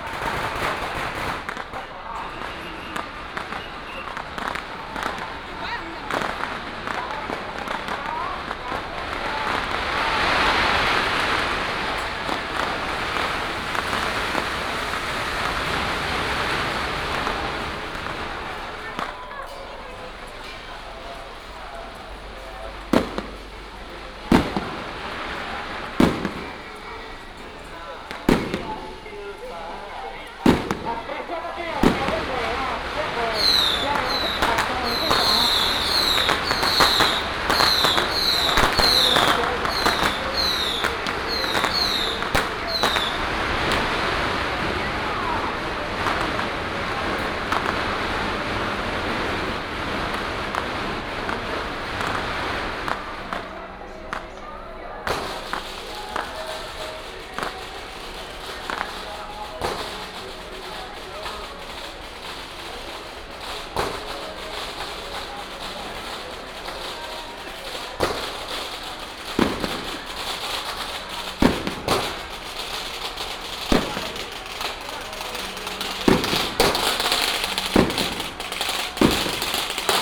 Baidong, Tongxiao Township - Fireworks and firecrackers sound
Matsu Pilgrimage Procession, Crowded crowd, Fireworks and firecrackers sound